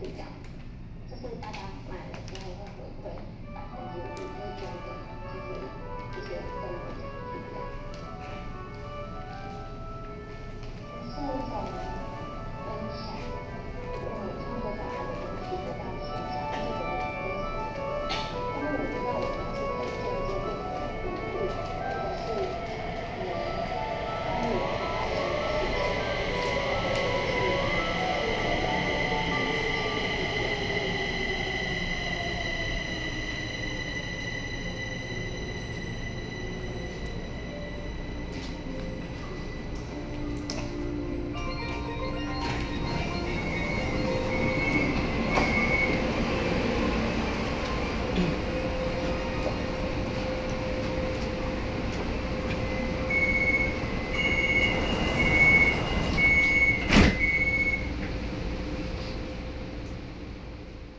Shihjia Station, Kaohsiung Mass Rapid Transit - The train stops
MRT platform, The train stops beeping sound, Sony PCM D50